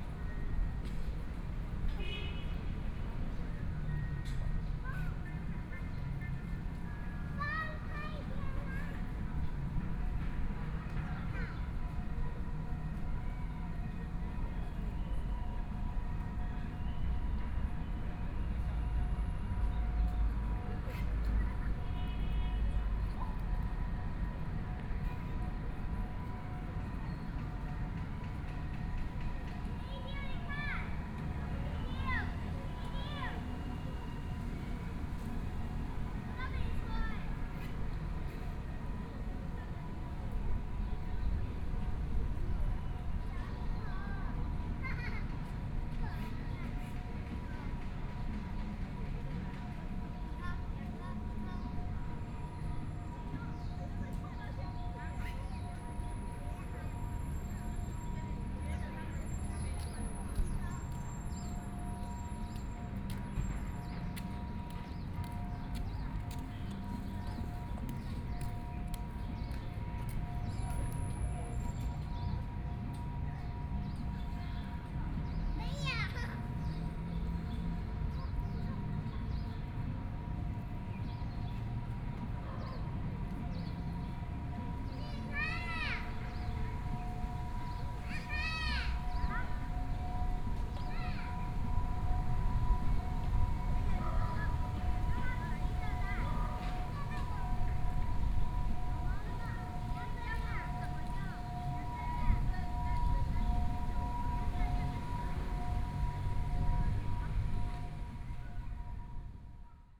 Sitting in the park, Traffic Sound, child's voice
Binaural recordings
Neihu District, Taipei City, Taiwan, 15 March 2014